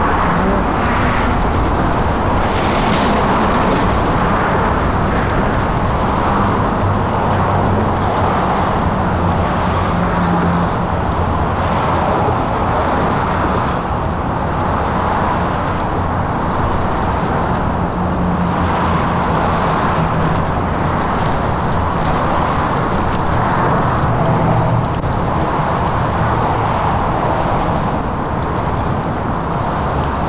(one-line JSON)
{"title": "Montreal: Turcot Yards - Turcot Yards", "date": "2009-03-17 15:30:00", "description": "equipment used: Korg Mr 1000\nThis was taken on some abandon train tracks between to murals of graffitti, i walk towards some water falling from the Turcot Int. at the end", "latitude": "45.47", "longitude": "-73.60", "altitude": "25", "timezone": "America/Montreal"}